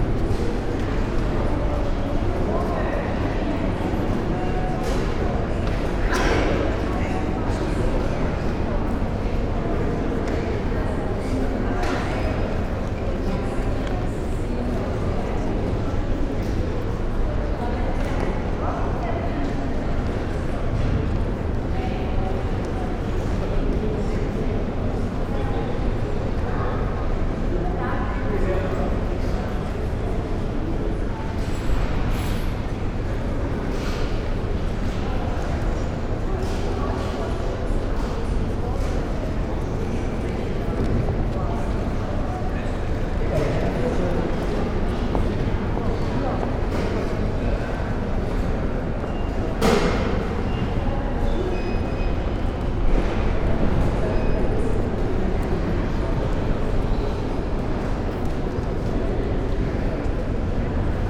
{"title": "Kunsthistorisches Museum, Wien - foyer", "date": "2015-01-10 12:49:00", "description": "strong wind outside, audible inside, murmur of people, walking", "latitude": "48.20", "longitude": "16.36", "altitude": "193", "timezone": "Europe/Vienna"}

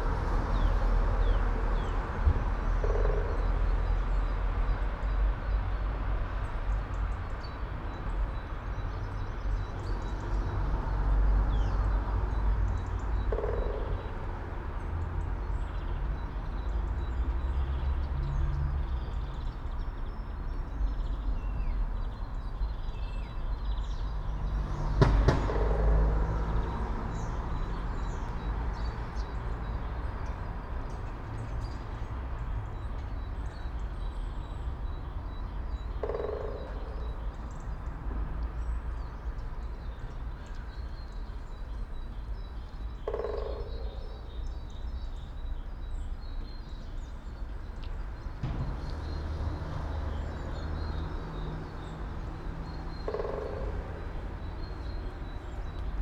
{"title": "all the mornings of the ... - apr 8 2013 mon", "date": "2013-04-08 07:12:00", "latitude": "46.56", "longitude": "15.65", "altitude": "285", "timezone": "Europe/Ljubljana"}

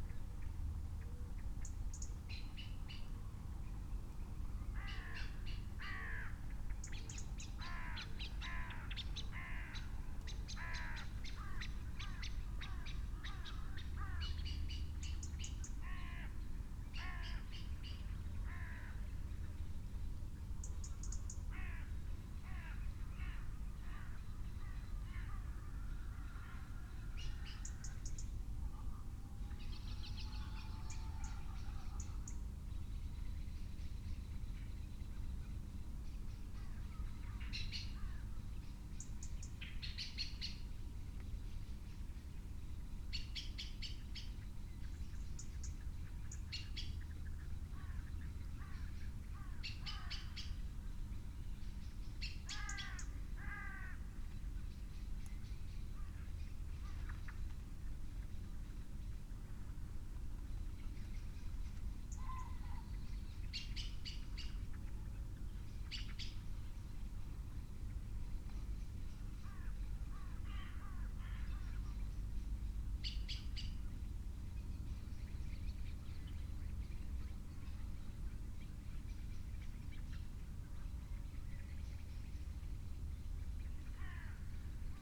{"title": "Luttons, UK - bird feeder soundscape ...", "date": "2019-12-25 07:40:00", "description": "bird feeder soundscape ... SASS ... bird calls from ... pheasant ... crow ... red-legged partridge ... robin ... blackbird ... collared dove ... starling ... tawny owl ... wren ... dunnock ... magpie ... house sparrow ... background noise ...", "latitude": "54.12", "longitude": "-0.54", "altitude": "79", "timezone": "Europe/London"}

